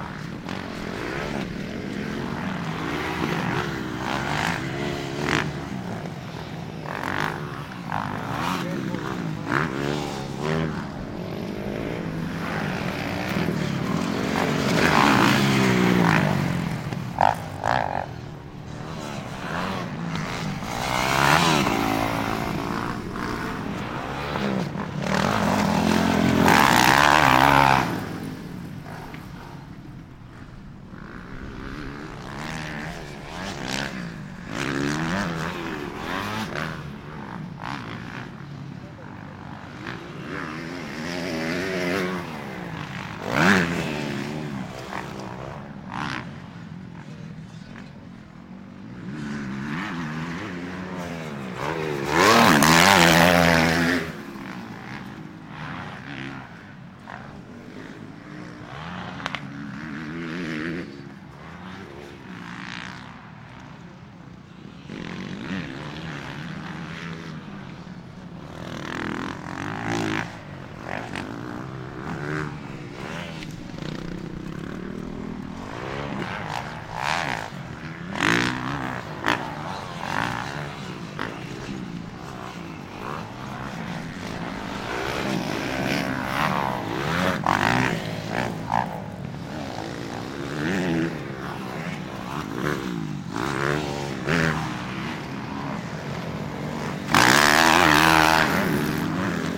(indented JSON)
{
  "title": "Joliet MX Park",
  "date": "2010-10-25 11:30:00",
  "description": "Dirt Bikes, MX Park, MX Track, MX, Mortorcycle",
  "latitude": "41.37",
  "longitude": "-88.23",
  "altitude": "155",
  "timezone": "America/Chicago"
}